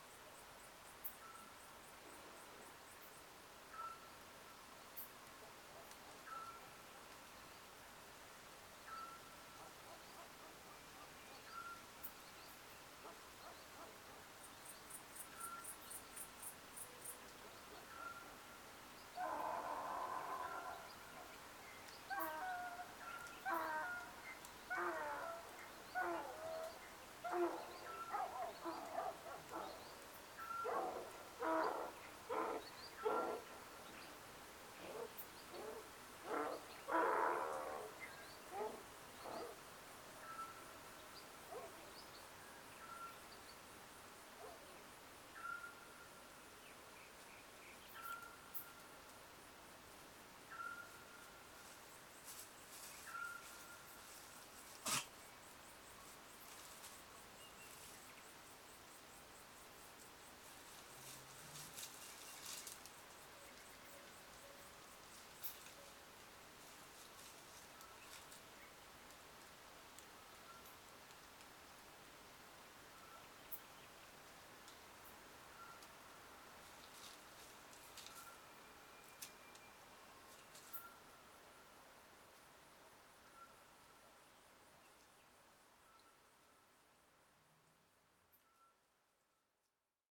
{"title": "Spitaki Mikro Papingo - Birds celebrate the summer solstice along with a few friends", "date": "2017-06-21 02:30:00", "description": "These sounds taken from an extended recording on the night of the summer solstice 2017 (20/21 June) from our garden in Mikro Papingo. This recording comprises two fragments: At about 2.30 am the Scops owl begins to wind down his mournful calljust as the first birds start their early morning song. Later on our drama queen donkey who grazes in the other village across the valley gives its first sad eeyore for the day; so echoing the scops I wanted to put them together. You can also hear distant goat bells, dogs and a mystery animal sneeze. Recorded using 2 Primo EM172 capsules made up by Ian Brady (WSRS) mounted in my homemade lightweight SASS (ref Vicki Powys et al) to an Olympus LS 11. No editing apart from selection, fade i/o and cross fade for donkey", "latitude": "39.97", "longitude": "20.73", "altitude": "1014", "timezone": "Europe/Athens"}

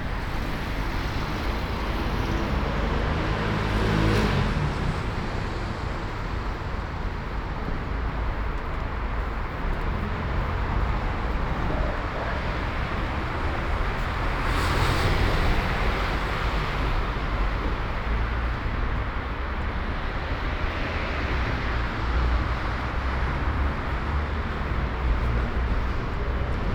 {"title": "Ascolto il tuo cuore, città. I listen to your heart, city. Several Chapters **SCROLL DOWN FOR ALL RECORDINGS - La flanerie aux temps du COVID19 Soundwalk", "date": "2020-03-10 19:31:00", "description": "Tuesday March 10 2020. Walking in the movida district of San Salvario, Turin the first night of closure by law at 6 p.m.of all the public places due to the epidemic of COVID19. Start at 7:31 p.m., end at h. 8:13 p.m. duration of recording 40'45''\nThe entire path is associated with a synchronized GPS track recorded in the (kml, gpx, kmz) files downloadable here:", "latitude": "45.06", "longitude": "7.68", "altitude": "246", "timezone": "Europe/Rome"}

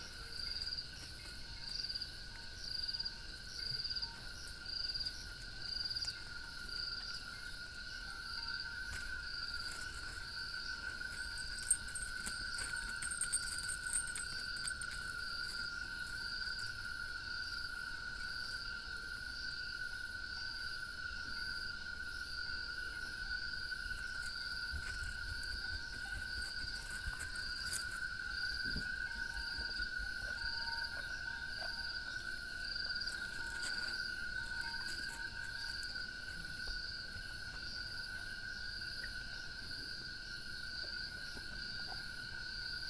{"title": "Harmony farm, Choma, Zambia - night sounds in summer", "date": "2018-12-02 21:50:00", "description": "sounds at night in the summer months...", "latitude": "-16.74", "longitude": "27.09", "altitude": "1264", "timezone": "Africa/Lusaka"}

